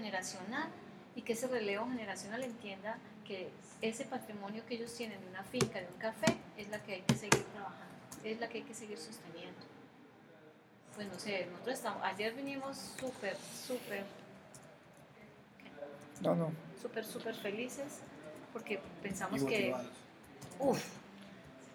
Cl., Armenia, Quindío, Colombia - Una tarde en la IU EAM
docentes hablando de proyecto integrador, relacionado con el café